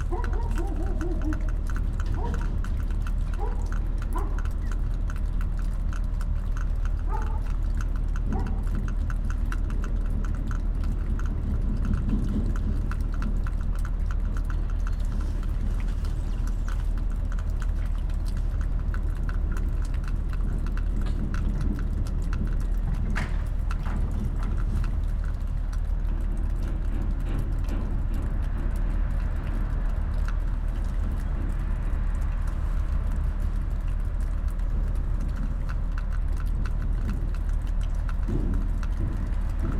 3136 Rosa Parks
Two condenser mics placed next to a window inside an abandoned house. Sounds of char crumbling as doors are opened and closed. Investigations with a contact mic and bullhorn.